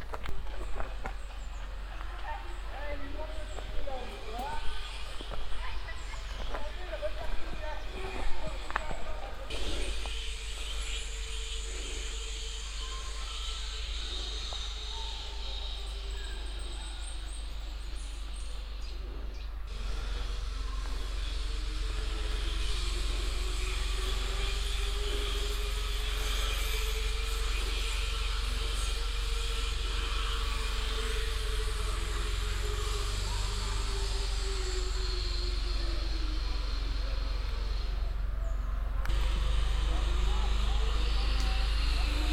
vianden, adventurepark, indian forest
Walking in the forest. Following a strange sound that is effected by people that glide downhill though the trees attached on steel ropes. Finally meeting a group of three horse riders that pass by. A generator noise in the distance.
Vianden, Abenteuerpark, Indian Forest
Spaziergang im Wald. Einem sonderbaren Geräusch folgend, das von Menschen verursacht wird, die an Stahlseilen abwärts durch die Bäume gleiten. Schließlich eine Begegnung mit drei Reitern. Das Gräusch eines Genrators in der Ferne.
Vianden, parc d'aventure, forêt indienne
Marche en forêt. À la suite d’un son étrange fait par des gens qui glissent en descendant à travers les arbres attachés à des cordes métalliques. Enfin, rencontre avec un groupe de trois cavaliers qui passent. Le bruit d’un générateur dans le lointain
Project - Klangraum Our - topographic field recordings, sound objects and social ambiences
Vianden, Luxembourg, 4 August 2011